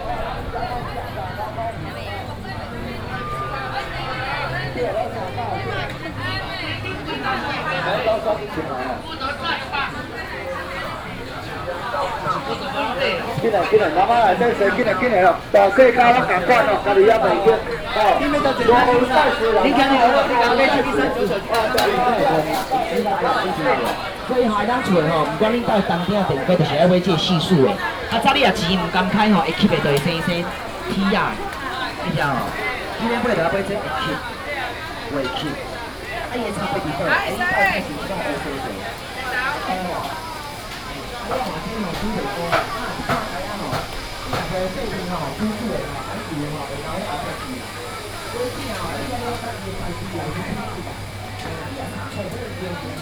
Xinyi St., Wufeng Dist., Taichung City - vendors peddling
traditional market, traffic sound, vendors peddling, Binaural recordings, Sony PCM D100+ Soundman OKM II
Wufeng District, Taichung City, Taiwan